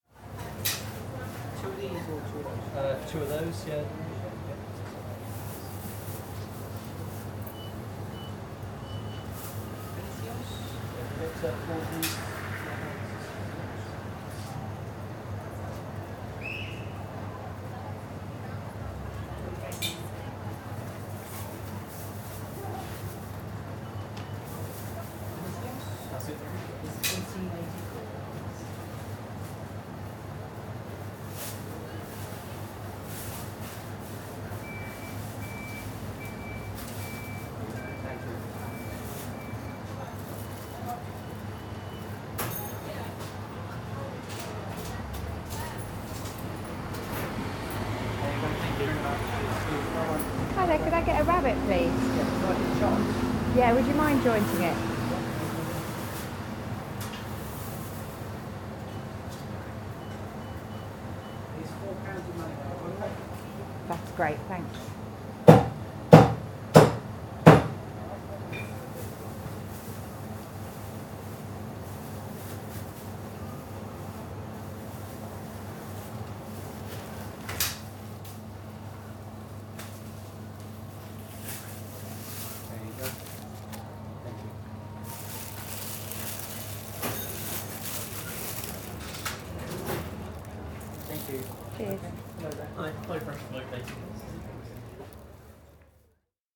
WM Vicars & Son, West Street, Reading, UK - Buying a rabbit
WM Vicars & Son, on West Street was the only butchers’ shop in Reading where you could buy game. I think it closed in 2014 so this is now an extinct sound. Buying meat there and witnessing the ruby red blood droplets in the sawdust underneath the hanging deers and pheasants was a more visceral experience than buying a polystyrene tub with anonymous lumps of flesh in it, wrapped in clingfilm, from the supermarket. I bought rabbit often as it is a lean meat which is guaranteed to have had a great, wild life; we have a surplus of wild rabbits running about the countryside; and it is cheap. The sound of the butcher deftly jointing the rabbit was a necessary part of my eating it, and whatever meat I eat in my life, somewhere someone has cut it up. Like so many small and local businesses, the butcher was unable to keep going in Reading and to compete with the prices set by the supermarkets for produce.